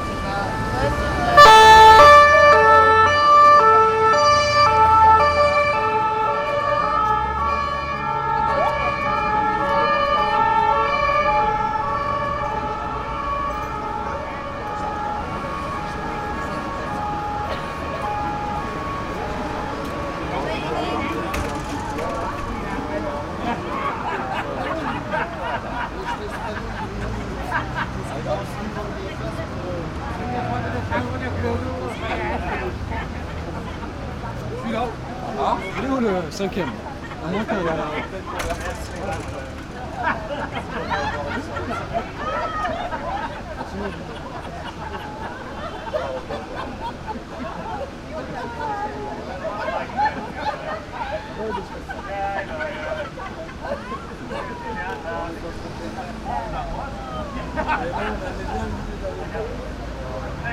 Paris, Place Pigalle, à la terrasse du café lomnibus
Pendant le tournage Pigalle la nuit canal+
Paris, France